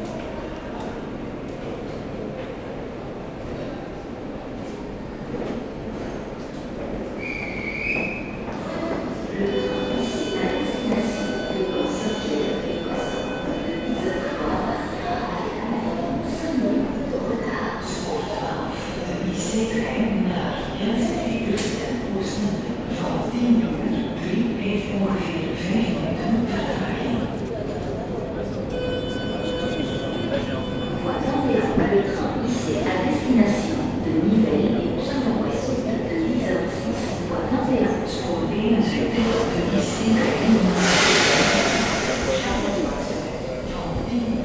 Ambiance of one of the biggest train station of Brussels : the Bruxelles-Midi (french) or Brussel-Zuid (dutch). A walk in the tunnels, platform, a train leaving to Nivelles, escalator, the main station and going outside to Avenue Fonsny road.